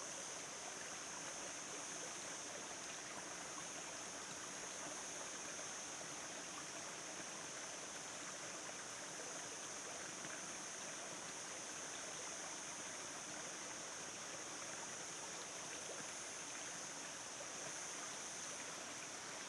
MVJQ+FH Bolulla, Espagne - Bolulla - Espagne Divers mix ambiance du jour
Bolulla - Province d'Alicante - Espagne
Divers mix ambiance du jour
ZOOM F3 + AKG 451B
Comunitat Valenciana, España, 15 July 2022